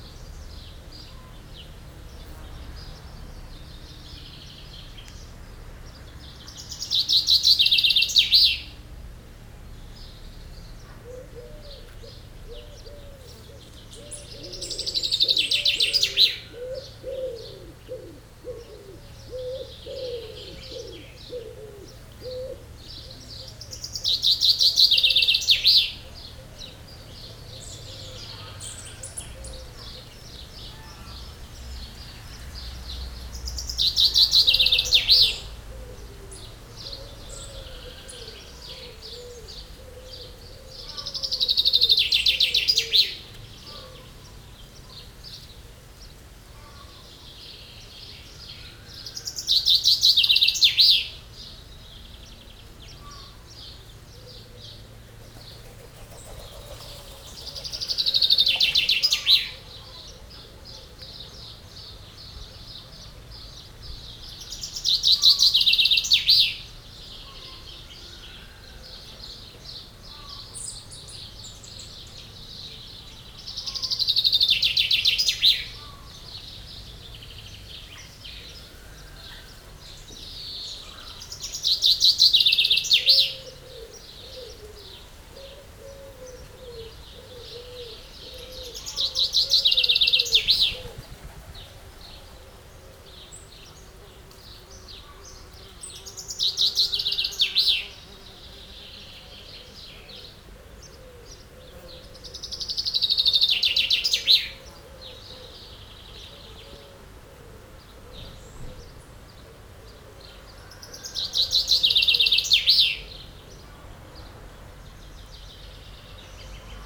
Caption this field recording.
A friendly chaffinch singing into a lime-tree. With this repetitive song, the bird is marking its territory.